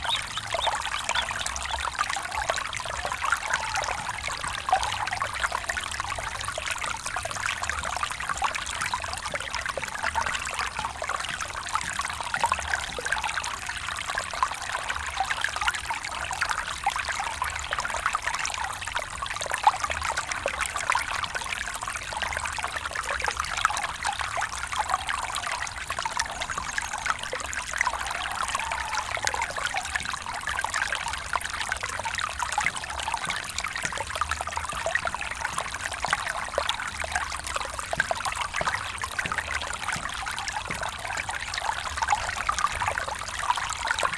Bonya Residence Ghana - Little Stream in Bonya residence Ghana.
Little Stream in Bonya residence Ghana.
Date: 09.04.2022. Time: 8am. Temperature: 32°C.
subtle human and bird activity.
Format: AB.
Recording Gear: Zoom F4, RODE M5 MP.
Field and Monitoring Gear: Beyerdynamic DT 770 PRO and DT 1990 PRO.
Best listening with headphones for spatial immersion.
Eastern Region, Ghana, 9 April 2022